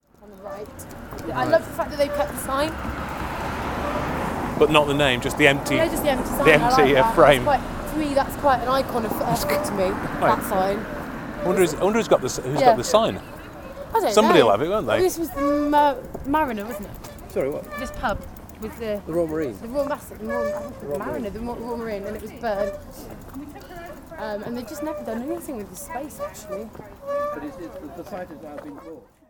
Efford Walk Two: The Royal Marine - The Royal Marine